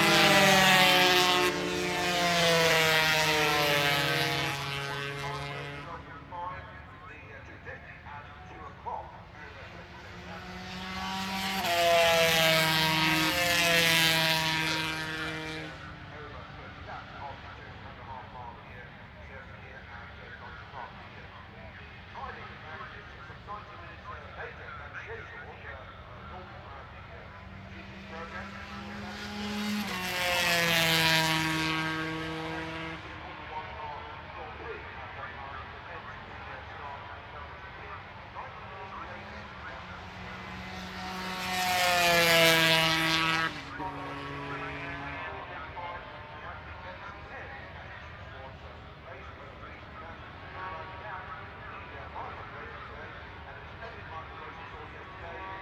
Unnamed Road, Derby, UK - British Motorcycle Grand Prix 2004 ... 125 warm up ...
British Motorcycle Grand Prix 2004 ... 125 warm up ... one point stereo mic to minidisk ...